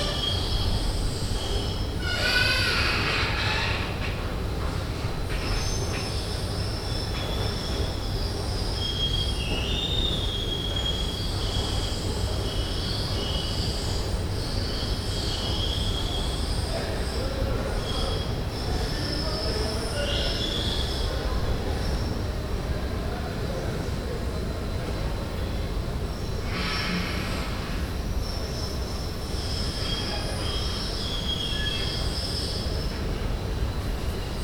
Zuidwal, Den Haag, Nederland - Creaking Escalator
It's a creaking escalator... as you can hear. Binaural recording made in the Parking Grote Markt.
Den Haag, Netherlands